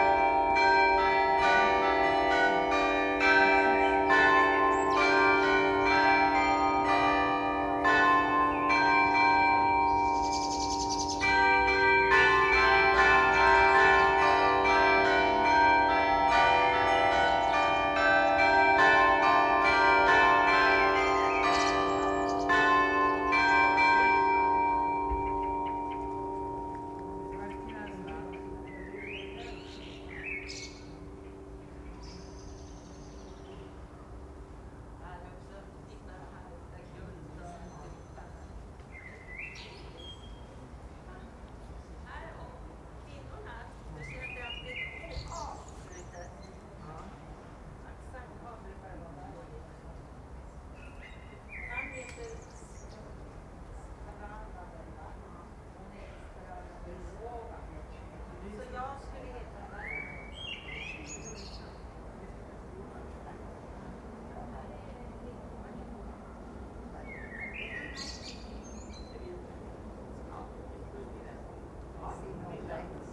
Štulcova, Praha, Czechia - Vysehrad carillon Blackbird song and passing plane
I particularly like the passing propeller plane in this recording. It creates a drone that moves in and out of tune with the carillon bells.